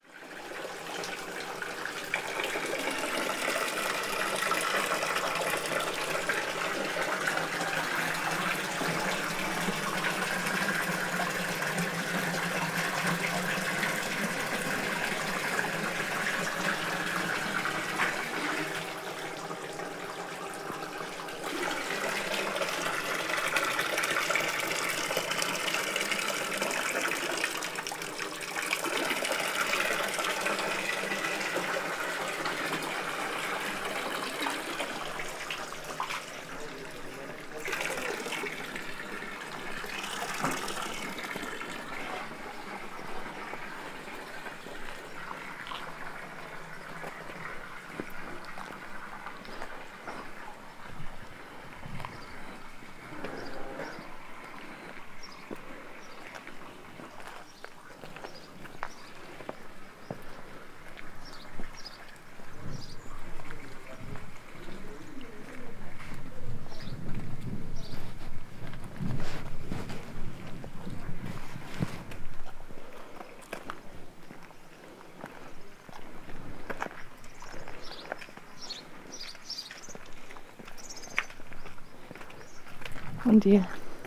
{
  "title": "Povoa Das Leiras, Portugal, walk - PovoaDasLeirasWalk2",
  "date": "2012-07-19 11:00:00",
  "description": "walk through the village with binaural microphones, from time to time manipulating objects. recorded together with Ginte Zulyte. Elke wearing in ear microphones, Ginte listening through headphones.",
  "latitude": "40.85",
  "longitude": "-8.16",
  "altitude": "748",
  "timezone": "Europe/Lisbon"
}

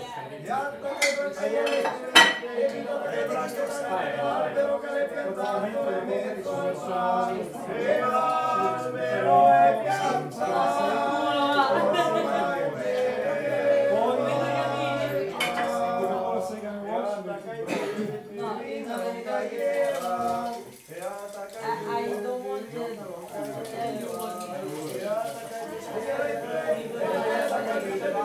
{
  "title": "Via Maestra, Rorà TO, Italia - Stone Oven House August 29/30 2020 artistic event 2 of 3",
  "date": "2020-08-29 23:40:00",
  "description": "Music and contemporary arts at Stone Oven House, Rorà, Italy, Set 2 of 3:\nOne little show. Two big artists: Alessandro Sciaraffa and Daniele Galliano. 29 August.\nSet 2 of 3: Saturday, August 30th, h.11:40 p.m.",
  "latitude": "44.79",
  "longitude": "7.20",
  "altitude": "893",
  "timezone": "Europe/Rome"
}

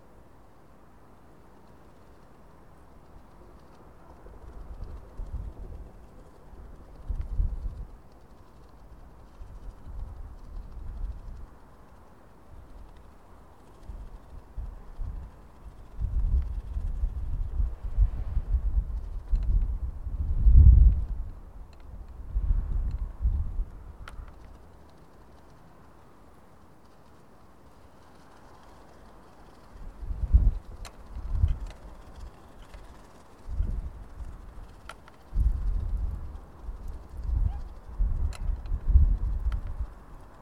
{
  "title": "Old Sarum hillfort, Salisbury, UK - 013 Bin liner and gate in the wind",
  "date": "2017-01-13 13:03:00",
  "latitude": "51.09",
  "longitude": "-1.80",
  "altitude": "95",
  "timezone": "GMT+1"
}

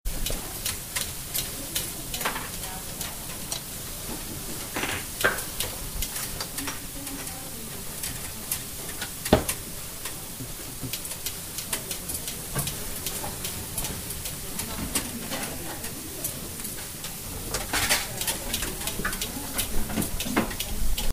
{
  "title": "Nürnberg, Rathausplatz, Grill @ Bratwurströslein",
  "description": "Sound of 37 \"Nürnberger Rostbratwürtschen\" grilled at the huge grill at Bratwurströslein.",
  "latitude": "49.45",
  "longitude": "11.08",
  "altitude": "308",
  "timezone": "GMT+1"
}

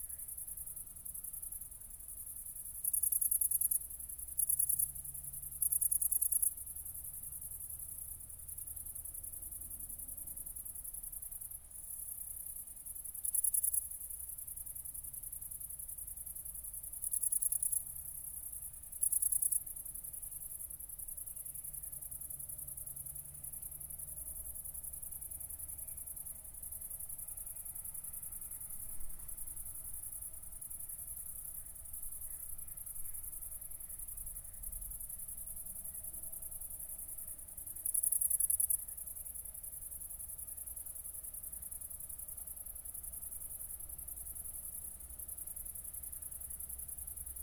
{
  "title": "brandenburg/havel, kirchmöser, nordring: garden - the city, the country & me: crickets",
  "date": "2014-08-04 00:53:00",
  "description": "crickets, upcoming wind, frogs in the distance\nthe city, the country & me: august 4, 2014",
  "latitude": "52.39",
  "longitude": "12.44",
  "altitude": "29",
  "timezone": "Europe/Berlin"
}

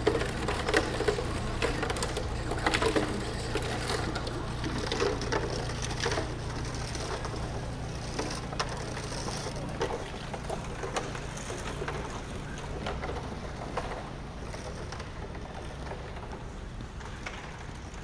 {
  "date": "2010-11-19 13:00:00",
  "description": "Concrete mixer, some traffic, a radio and pedestrians on the Spui.\nRecorded as part of The Hague Sound City for State-X/Newforms 2010.",
  "latitude": "52.08",
  "longitude": "4.32",
  "altitude": "8",
  "timezone": "Europe/Amsterdam"
}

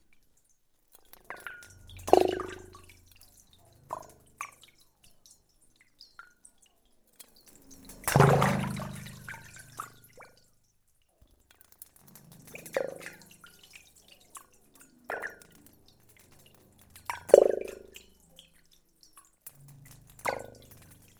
{
  "title": "Largentière, France - Strange pipe",
  "date": "2016-04-26 11:10:00",
  "description": "Pipes are always my favourite objects in underground mines. You can manipulate it whatever you want, it will do different sounds everytime. That's why since a year now, I'm especially researching mining pipes. This one is fun, like many other. It's a vertical pipe, buried in the ground. At the bottom, there's water. I put microphones inside the pipe (about one meter) and I'm droping very small gravels.\nPipes are all my life ;-)",
  "latitude": "44.54",
  "longitude": "4.29",
  "altitude": "256",
  "timezone": "Europe/Paris"
}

